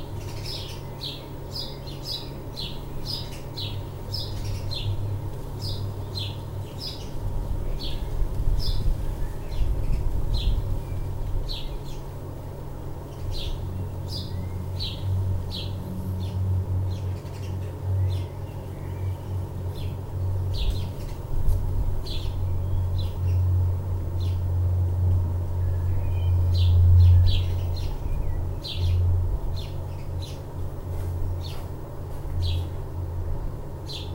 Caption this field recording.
in front of the window, hotel "dannerwirt". recorded june 6, 2008. - project: "hasenbrot - a private sound diary"